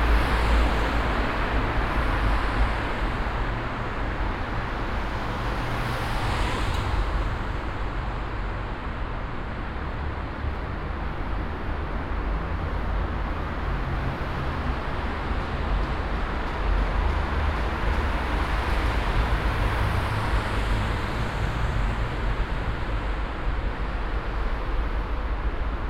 cologne, deutz, opladener str, durchfahrt an lanxess arena
fahrzeugtunnel an und unter der neu benannten kölner arena, morgens, zwei ampelphasen
soundmap nrw: social ambiences/ listen to the people - in & outdoor nearfield recordings
29 December 2008, ~22:00